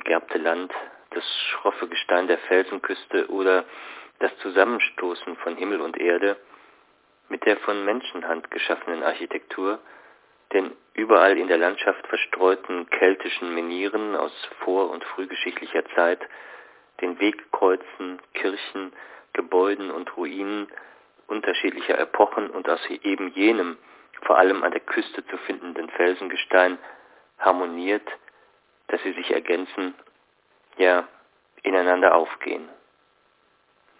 himmel/worte/land (4) - himmel worte land (4) - hsch ::: 08.05.2007 16:57:14
France